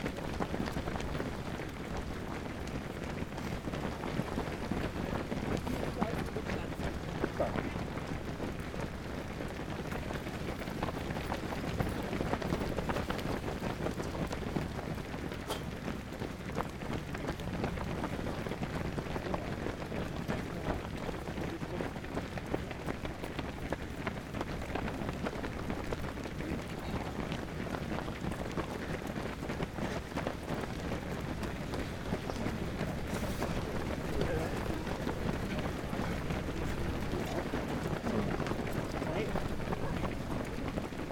Tausendfüssler - heima®t Talfinger Uferstrasse Einsteinmarathon
Die Läufer des Einsteinmarathon 2014.
heima®t - eine klangreise durch das stauferland, helfensteiner land und die region alb-donau
Ulm, Germany